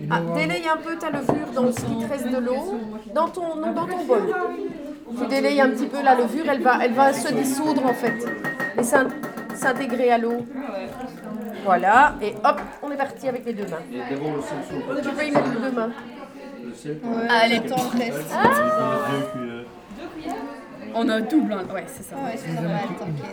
L'Hocaille, Ottignies-Louvain-la-Neuve, Belgique - KAP Le Levant
The workshop is near to the end. People are kneading the bread and everybody is happy. As this is friendly, ambience is very noisy ! After kneading, students will go back home and they will be able to bake it. Persons with down syndrome taught perfectly students.